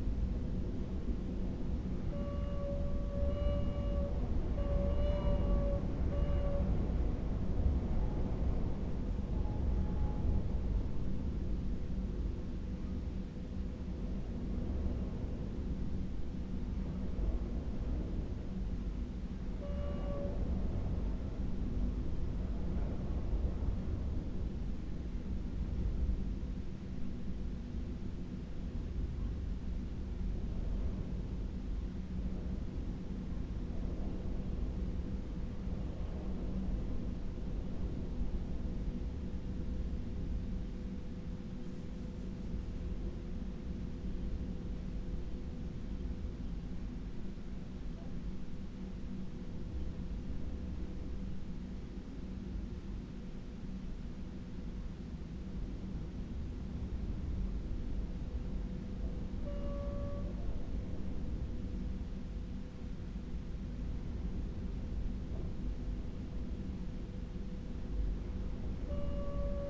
whistling window seal ... in double glazing unit ... farmhouse tower ... olympus ls 14 integral mics on mini tripod ...
1 February, ~12:00